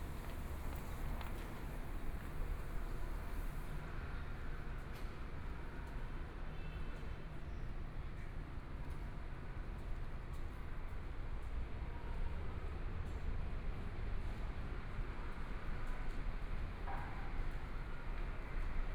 Changchun Rd., Zhongshan Dist. - on the Road
Walking on the road, （Changchun Rd.）Traffic Sound, Binaural recordings, Zoom H4n+ Soundman OKM II